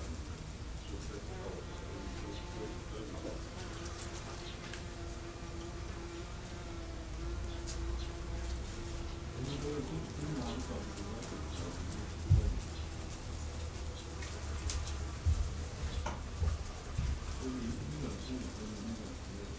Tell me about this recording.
greenhouse, Estonian talk, insects buzzing